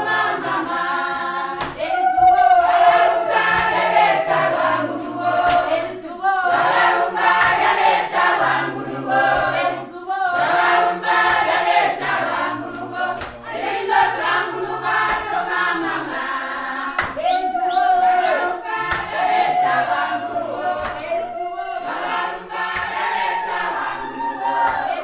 the members of the Kariyangwe Women Forum are telling in song about the benefits of Zubo’s programmes they are experiencing. Zubo twalumba ! Thanks to Zubo!
Zubo Trust is a women’s organization bringing women together for self-empowerment.